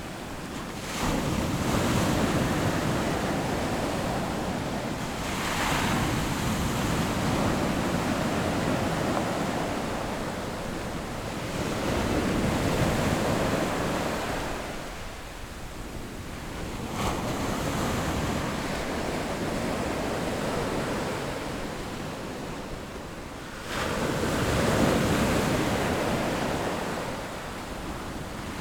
Sound of the waves, Circular stone coast
Zoom H6 XY + Rode NT4
5 September, 14:18